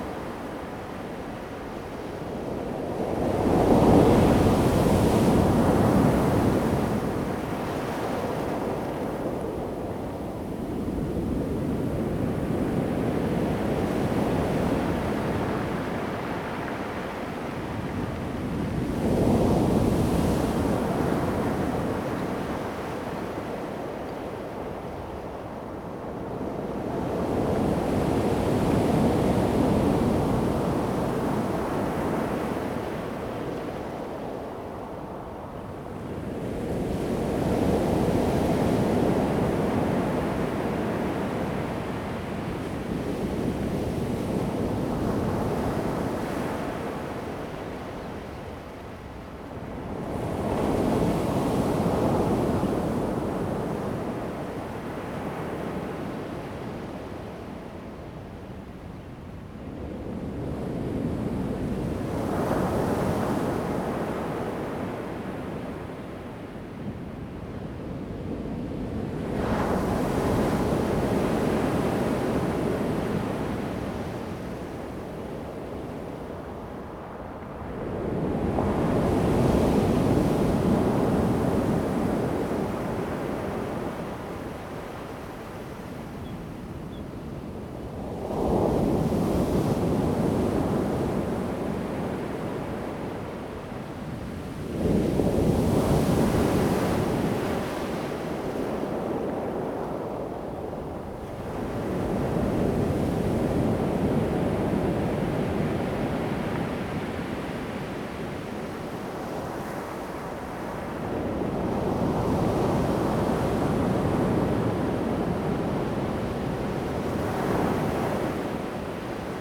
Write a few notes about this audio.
At the beach, Sound of the waves, birds sound, Zoom H2n MS+XY